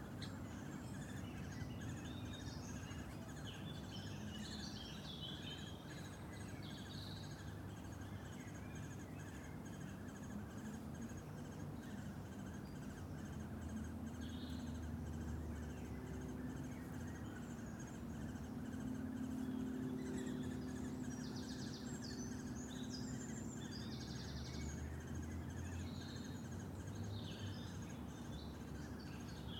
Wooded area, Reading University Campus, Reading, UK - Baby woodpeckers?
I have recently discovered a beautiful pocket of woodland at the fringes of the Harris Gardens in the grounds of Reading University and I wanted to go there to listen to the sounds of the birds who are all very busily chirruping away just now with their babies and their nests. I found a nice clearing, resonant with the songs of birds, but then became aware of something – amplified through my microphones – high up and high pitched in the trees. Luckily I was recording using Chris-Watson’s pro-tip to attach two omni-directional microphones to a coathanger. This gives you a lovely stereo impression of ambient sound, but it also means that when you hear a difficult-to-access sound that is high up, you can wedge your coathanger on a stick and poke it up to the source. That is what I did, standing in a clearing still and near to a tree, watched over by two anxious woodpeckers. I think this sound is what I heard – the sound of their babies in a nest inside the tree. Isn’t it amazing?
7 May, 15:09